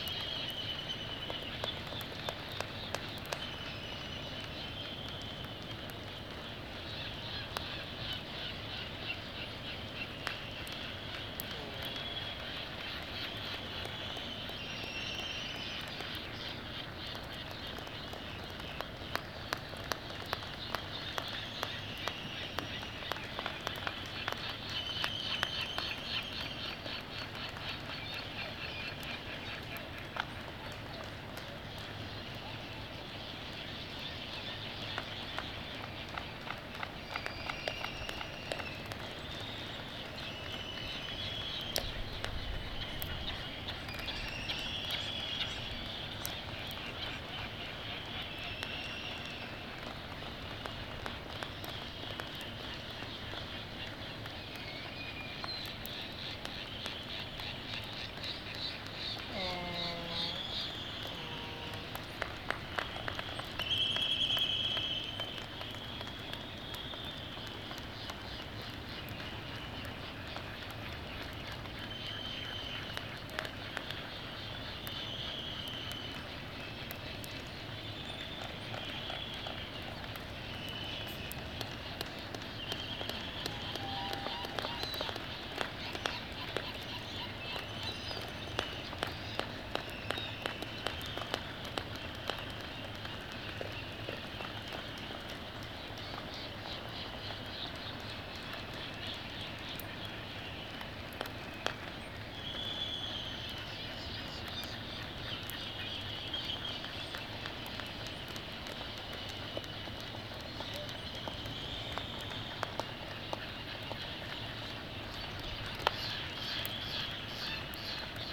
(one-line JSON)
{"title": "United States Minor Outlying Islands - laysan albatross soundscape ...", "date": "1997-12-19 05:37:00", "description": "Charlie Barracks ... Sand Island ... Midway Atoll ... mic 3m from adult male on nest ... laysans ... whinnying ... sky moo ... groaning ... bill clappering ... other birds ... white terns ... black-footed albatross ... bonin petrels ... black noddy ... Sony ECM 959 one point stereo mic to Sony Minidisk ...", "latitude": "28.22", "longitude": "-177.38", "altitude": "14", "timezone": "Pacific/Midway"}